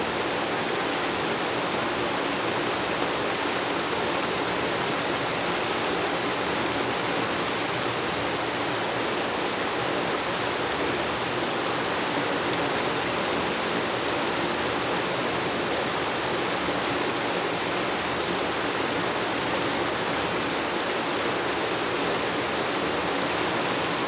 Königsheide, Südostallee, Berlin - ground water treatment plant
close up of the ground water treatment plant (Grundwasseraufbereitungsanlage) in Königsheide forest. Since a few years ground water quality gets worse because of the contamination of former industrial plants in surrounding areas. Extensive prevention infrastructure has been installed and must be operated permanently.
(Tascam DR-100 MKIII, Superlux SL502 ORTF)